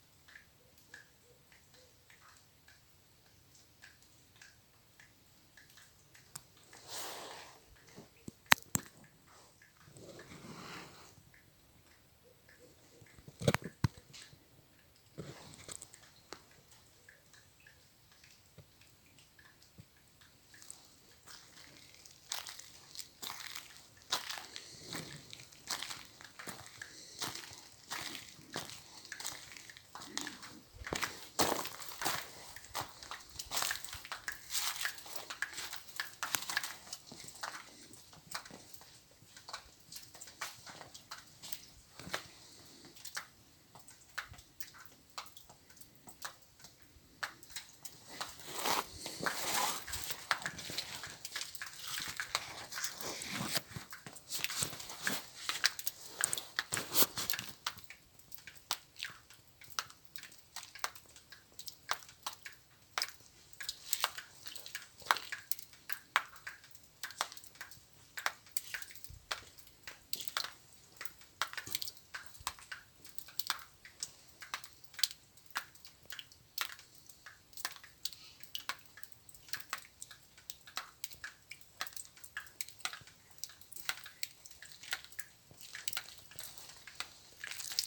Via Montegrappa, Levice CN, Italia - Baia Blanca Reloaded
Audio recording inside former Bay Blanca nightclub now disused: late afternoon, winter, fog, light rain. Walking inside, staying for a while, walking back out, on the main road.